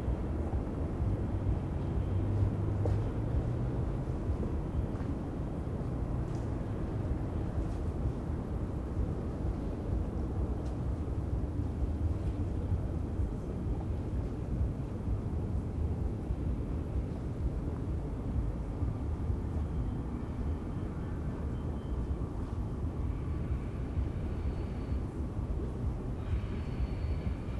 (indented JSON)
{
  "title": "berlin, john-foster-dulles-allee: haus der kulturen der welt, spreeufer - the city, the country & me: at the shore of river spree nearby house of the cultures of the world",
  "date": "2011-08-05 00:17:00",
  "description": "tourist boats generating waves, sound of trains and sirens\nthe city, the country & me: august 5, 2011",
  "latitude": "52.52",
  "longitude": "13.37",
  "altitude": "29",
  "timezone": "Europe/Berlin"
}